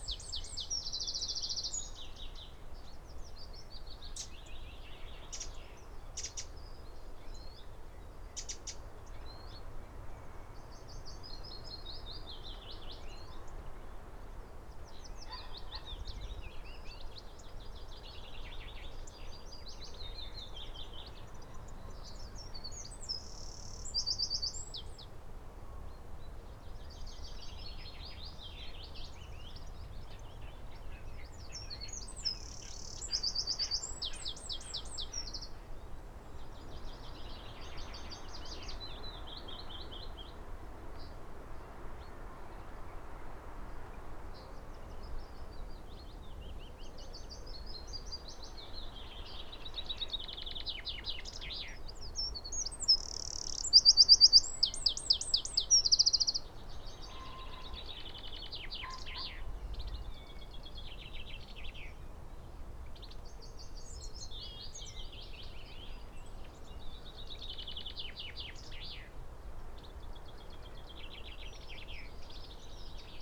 Green Ln, Malton, UK - wren soundscape ... loud proud ... occasionally faint ...
wren soundscape ... loud proud ... occasionally faint ... SASS to Zoom H5 ... bird calls ... song ... pheasant ... blackbird ... robin ... buzzard ... red-legged partridge ... tawny owl ... carrion crow ... great tit ... willow warbler ... blackcap ... chaffinch ... coal tit ... dunnock ... blue tit ... SASS wedged in crook of tree ... wren song and calls almost every minute of the soundscape both near and far ...